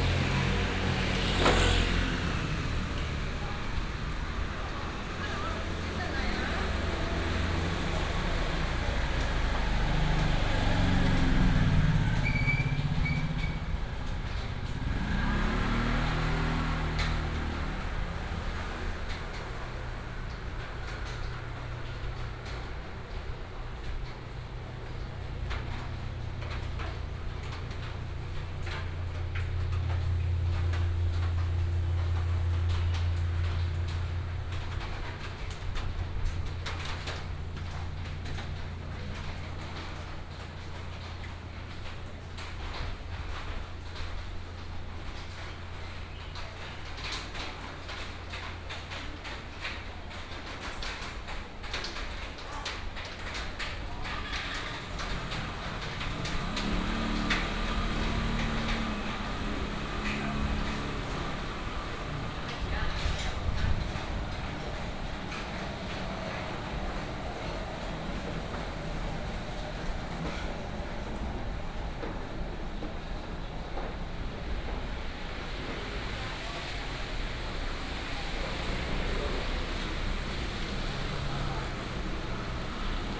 Walking in the alley, Old shopping street, Traffic sound, Rain sound